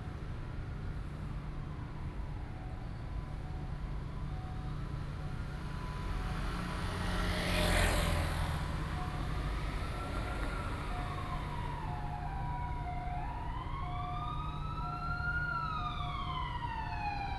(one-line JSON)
{"title": "Beitou, Taipei - Traffic", "date": "2013-07-30 21:38:00", "description": "Traffic, Sitting on the ground, Sony PCM D50 + Soundman OKM II", "latitude": "25.14", "longitude": "121.50", "altitude": "11", "timezone": "Asia/Taipei"}